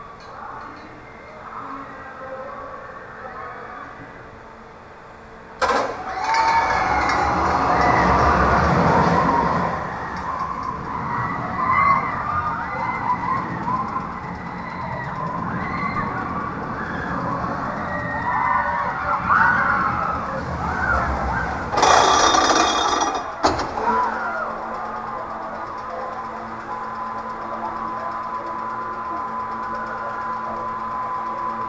Wavre, Belgique - Near the amusement park
Near the amusement park called Walibi, you can hear the children playing loudly.